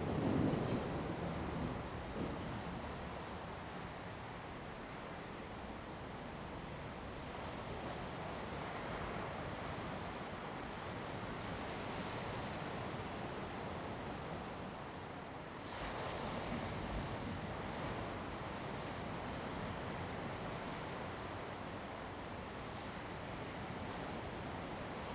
On the coast, Sound of the waves
Zoom H2n MS +XY
Taitung County, Taiwan, 30 October